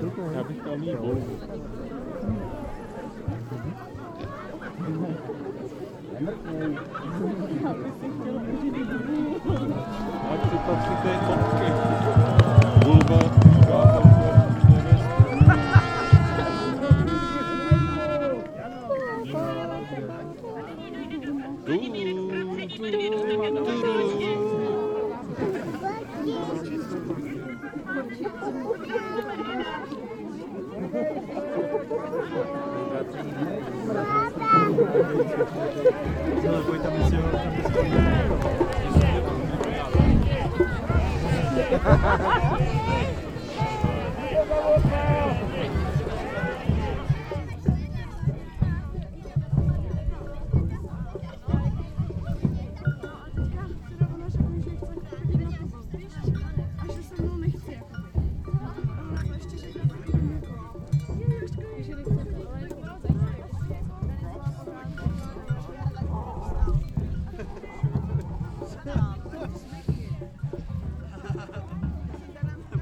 Roztoky, Česká republika - Masopust
Rather new, or recovered tradition of the Carnival celebration happens annually Saturday before the Ash Tuesday as a join venture between Prague districts Roztoky and Únětice. Sometimes almost 2000 people in masks and with live music gather and join the procession, starting from the village of Roztoky and the other from Únětice. Finally there is a perfomative meeting at Holý vrch with dance and music and both then all continues to a party with live music in Kravín pub.